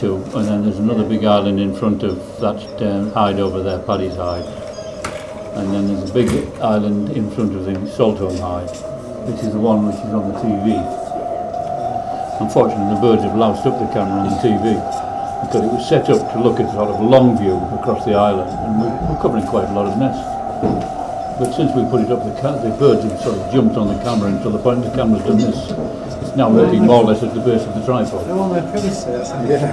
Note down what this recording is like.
A former industrial site converted into a bird sanctuary, Saltholme is surrounded by the landscape that inspired Ridley Scott's opening sequence of Blade Runner - active and disused chemical plants, ship breakers, and a nuclear power plant.